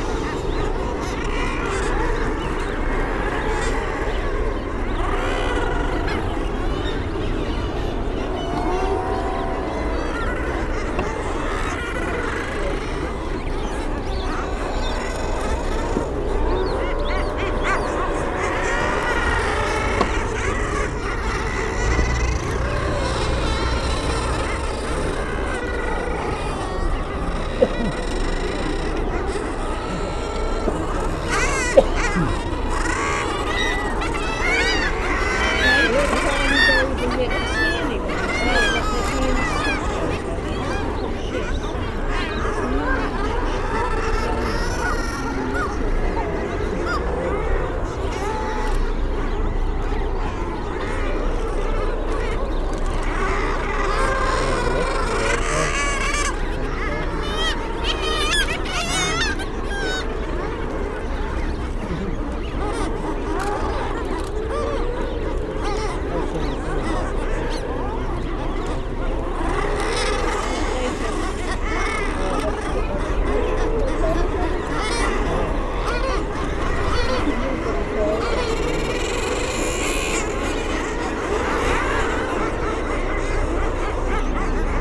North Sunderland, UK - guillemot colony ...
Staple Island ... Farne Islands ... wall to wall nesting guillemots ... background noise from people ... boats ... planes ... cameras ... bird calls from kittiwakes ... oystercatchers ... razor bills ... initially a herring gull slips between the birds causing consternation ... warm sunny day ... parabolic reflector ...